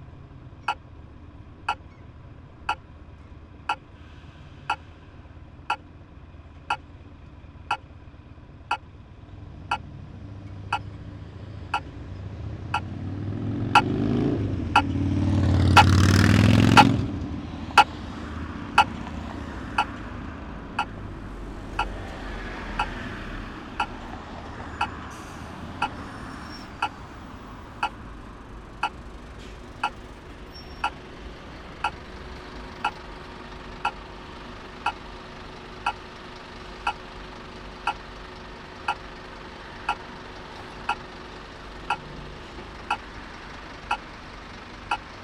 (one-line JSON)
{"title": "Malmö, Sweden - Red light signal", "date": "2019-04-17 17:30:00", "description": "A classical sound of red light signal for pedestrians, in Sweden.", "latitude": "55.60", "longitude": "13.02", "altitude": "4", "timezone": "Europe/Stockholm"}